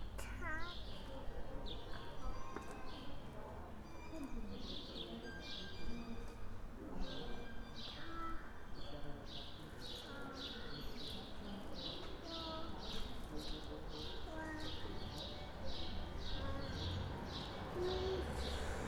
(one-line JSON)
{"title": "radio aporee - spring morning", "date": "2011-06-02 10:45:00", "description": "warm spring morning, music from an open window, sounds and voices in the street, in front of the radio aporee headquarter", "latitude": "52.49", "longitude": "13.42", "altitude": "45", "timezone": "Europe/Berlin"}